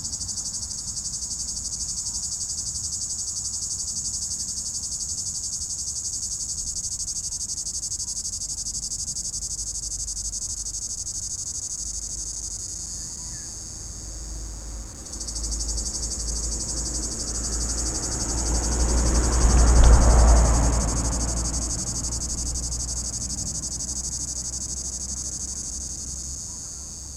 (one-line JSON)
{"title": "Rte d'Aix, Chindrieux, France - cigale", "date": "2022-08-12 12:20:00", "description": "Cigale dans un pommier au sommet de la côte de groisin, il fait 32° circulation sur la RD991, quelques voix de la plage de Chatillon au loin. Zoomh4npro niveau préampli 100.", "latitude": "45.80", "longitude": "5.86", "altitude": "275", "timezone": "Europe/Paris"}